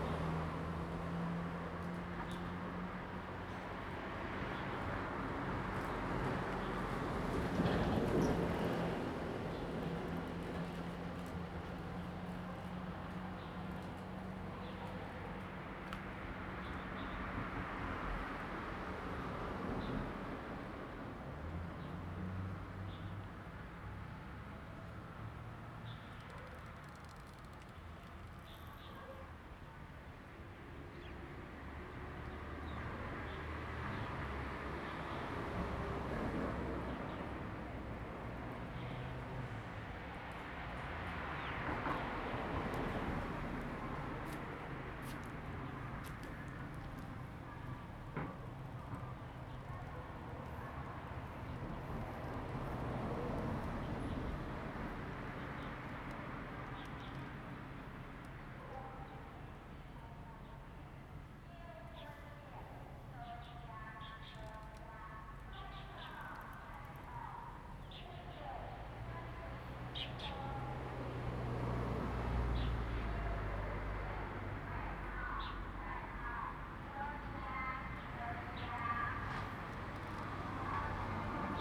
太武社區, Jinsha Township - Birds and Traffic Sound
Birds singing, Traffic Sound
Zoom H2n MS +XY
金門縣 (Kinmen), 福建省, Mainland - Taiwan Border